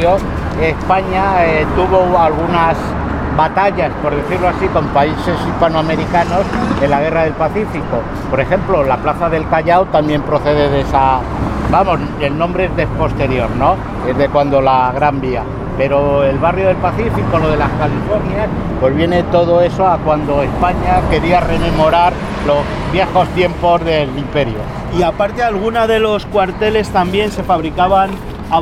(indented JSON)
{
  "title": "Adelfas, Madrid, Madrid, Spain - Pacífico Puente Abierto - Transecto - 03 - Calle Seco con Avda. Ciudad de Barcelona",
  "date": "2016-04-07 19:00:00",
  "description": "Pacífico Puente Abierto - Transecto - Calle Seco con Avda. Ciudad de Barcelona",
  "latitude": "40.40",
  "longitude": "-3.67",
  "altitude": "612",
  "timezone": "Europe/Madrid"
}